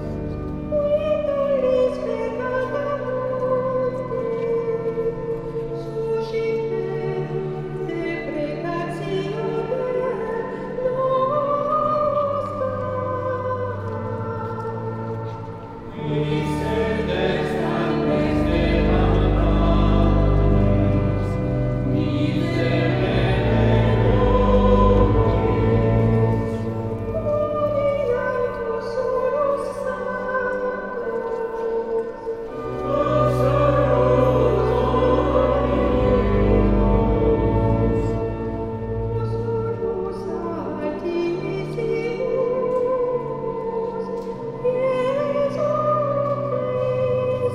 An excerpt of of the mass in the Chartres cathedral. It's a quite traditional rite, as small parts are in latin.